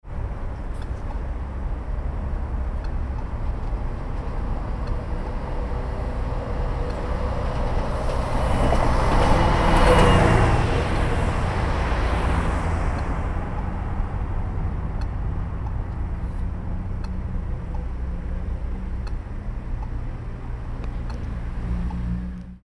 Posta, Rijeka, traffic sounds
Traffic sounds..and traffic light with acoustic signal(-.